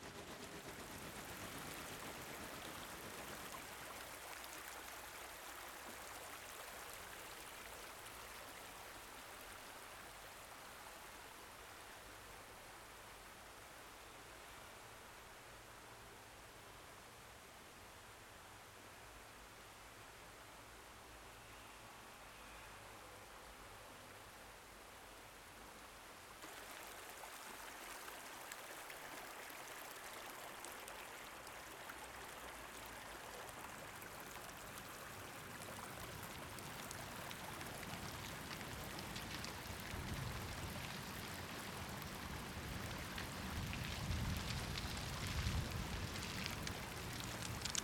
100台灣台北市中正區汀州路三段230巷23號 - 水聲潺潺 葉子颼颼 小鳥啾啾
水聲潺潺 葉子颼颼 小鳥啾啾